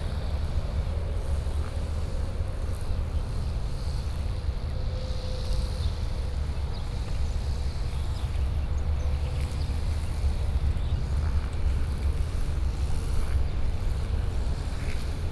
衛武營都會公園, Kaohsiung City - Walk in the park
Walk in the park, Traffic noise is very noticeable Park
Kaohsiung City, Taiwan, 15 May 2014, ~16:00